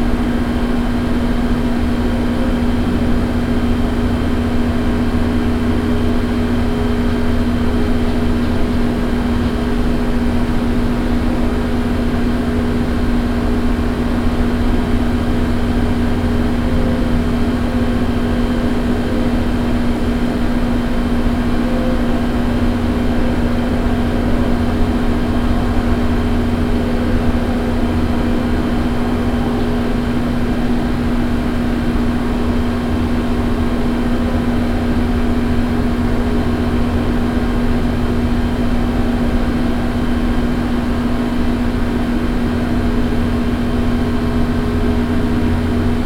Austin, Crow Ln., Building air-conditioner
USA, Texas, Austin, Building air conditioner, Night, Binaural